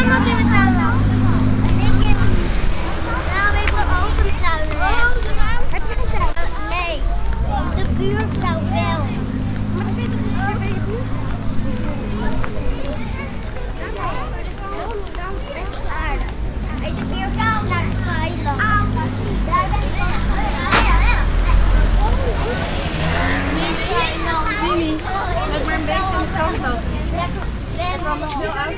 amsterdam, leidsegracht, a school children group
on a hot summer noon, a larger group of dutch school kids walking long the channel
city scapes international - social ambiences and topographic field recordings
Amsterdam, The Netherlands, 11 July